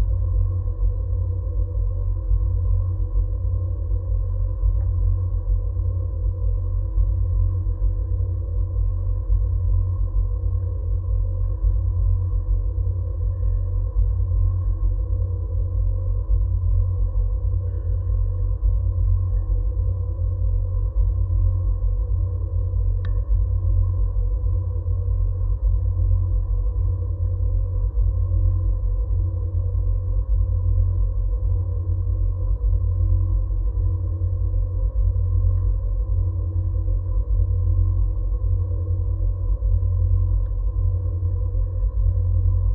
Kupiskis, tower drone
contact microphones on water skiing tower
Lithuania, 24 June, ~14:00